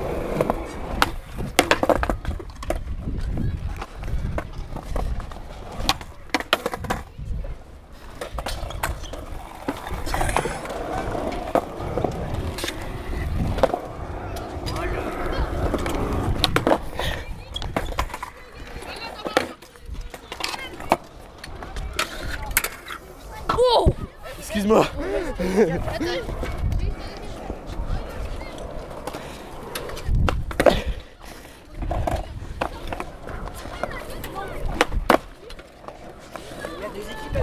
Saint-Nazaire, France - Comme sur des roulettes
Céleste et Emma ont confié leur micro à Paul skateur. Ambiance au Skate Park. Radio La Tribu.
23 September 2015, 16:00